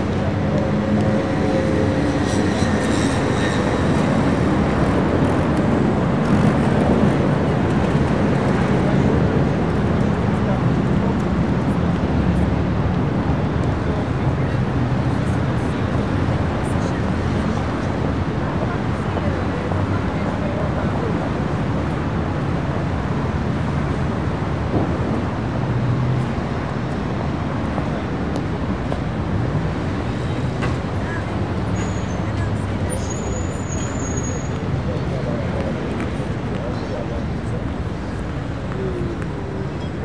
paris, avenue des champs-elysees, traffic
afternoon traffic with pedestrians and a police siren
international cityscapes - topographic field recordings and social ambiences